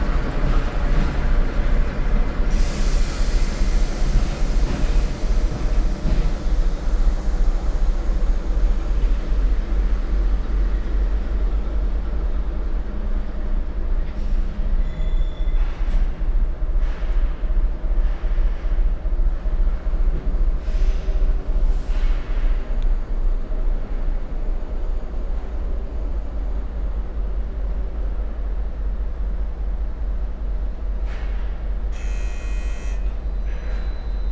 The recording of the ambient with machine sounds at the turntable. Near the Bohdalec and the railway crossing Depo Vršovice.
Česko, European Union, 18 July, 13:02